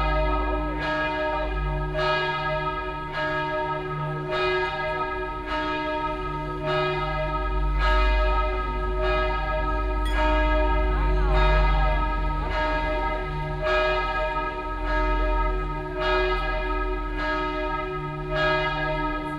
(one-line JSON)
{"title": "Aarau, Kirchplatz, Fountain, Bells, Schweiz - walk around the well", "date": "2016-06-30 11:54:00", "description": "Walk around the well, the bells are tolling, noon at Kirchplatz, people are starting to have lunch.", "latitude": "47.39", "longitude": "8.04", "altitude": "381", "timezone": "Europe/Zurich"}